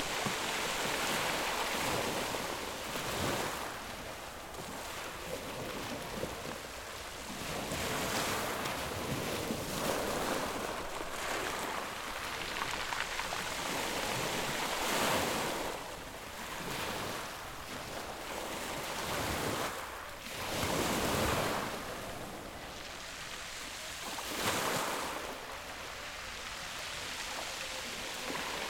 {"title": "Dois de Julho, Salvador - BA, Brasil - Roza/Azul", "date": "2014-02-15 16:39:00", "description": "Som do mar batendo nas pedras do solar do unhão. Em Salvador, ao lado do MAM da Bahia.\nUtilizando Zoom\nFeito por Raí Gandra para a disciplina de Sonorização I UFRB Marina Mapurunga", "latitude": "-12.98", "longitude": "-38.52", "altitude": "35", "timezone": "America/Bahia"}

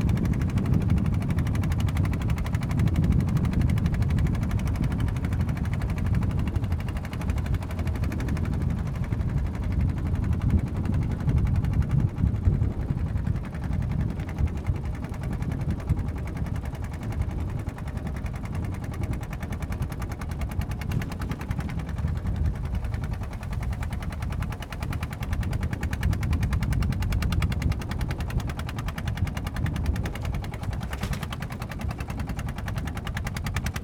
{"title": "Fangyuan Township, Taiwan - Small truck traveling at sea", "date": "2014-03-09 09:09:00", "description": "Small truck traveling at sea, The sound of the wind, Oysters mining truck, Very strong winds weather\nZoom H6 MS", "latitude": "23.93", "longitude": "120.31", "altitude": "1", "timezone": "Asia/Taipei"}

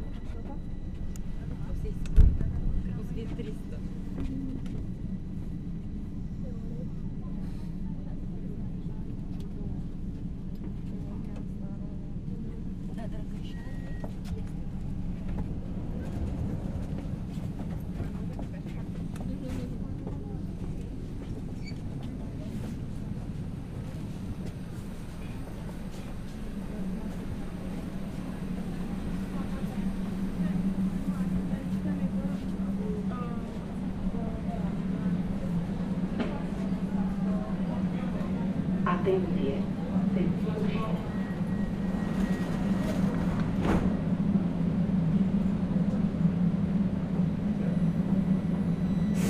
{"title": "Piata Uniri - Subway to Piata Romana, Bucharest", "date": "2011-11-22 15:51:00", "description": "Piata Uniri - Subway to Piata Romana", "latitude": "44.43", "longitude": "26.10", "altitude": "77", "timezone": "Europe/Bucharest"}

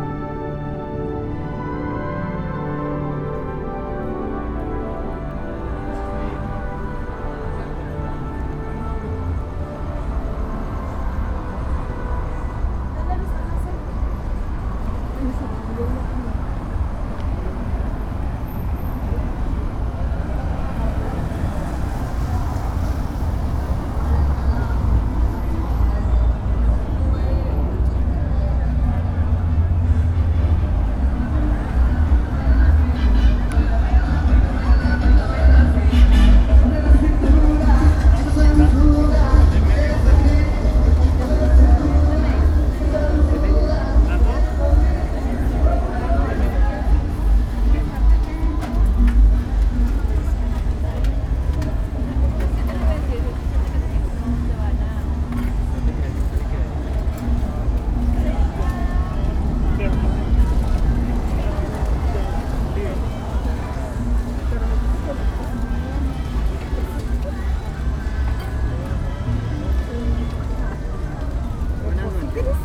{"title": "de Septiembre, Centro, León, Gto., Mexico - Caminando en el exterior del templo expiatorio, entrando al templo y saliendo de nuevo.", "date": "2021-10-23 20:49:00", "description": "Walking outside the expiatory temple, entering the temple, and leaving again.\nYou can hear people passing by outside, people selling things, cars passing through the street, and the sound of tires on the characteristic floor of Madero Street. Then the sound of entering the temple where a wedding was taking place and then the music begins. Some sounds of people and babies crying.\nAnd at the end going out again and where there are people talking, cars passing by with loud music and then arriving at the corner where there are stands selling tacos and food for dinner.\nI made this recording on October 23rd, 2021, at 8:49 p.m.\nI used a Tascam DR-05X with its built-in microphones and a Tascam WS-11 windshield.\nOriginal Recording:\nType: Stereo\nCaminando en el exterior del templo expiatorio, entrando al templo y saliendo de nuevo.", "latitude": "21.12", "longitude": "-101.67", "altitude": "1805", "timezone": "America/Mexico_City"}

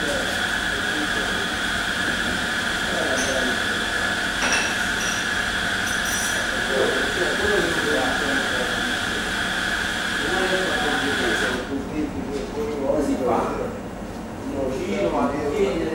{"title": "verona porta nuova - stazione porta nuova, snack bar", "date": "2009-10-21 22:30:00", "description": "stazione porta nuova, snack bar", "latitude": "45.43", "longitude": "10.98", "altitude": "63", "timezone": "Europe/Rome"}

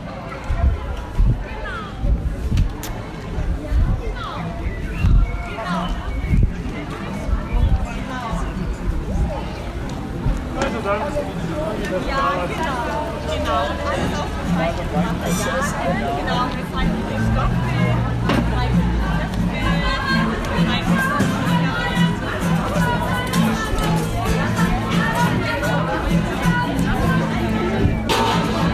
{"title": "Fidel-Kreuzer-Straße, Bad Wörishofen, Deutschland - Bio StreetFood Markt", "date": "2022-05-21 12:00:00", "description": "A walk around the Bio StreetFood Market/ 10 Years anniversary, Bio Oase", "latitude": "48.00", "longitude": "10.59", "altitude": "630", "timezone": "Europe/Berlin"}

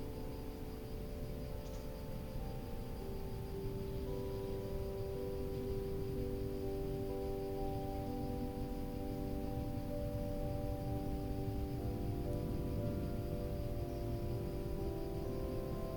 {"title": "Unnamed Road, Somma lombardo VA, Italy - S. Maria Maddalena Bells, recorded from the woods", "date": "2020-08-21 19:03:00", "description": "Bells from the Church of S, Maria Maddalena, recorded from a trail in the woods. Birds chirping and insect buzzing can be heard, along with a plane at low altitude passing by. Recorded with an Olympus LS-14 resting on the ground.", "latitude": "45.66", "longitude": "8.69", "altitude": "219", "timezone": "Europe/Rome"}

